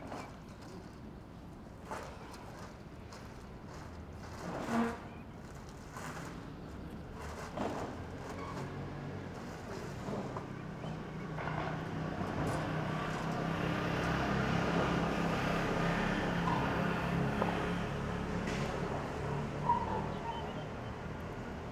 same place in the morning, clean up service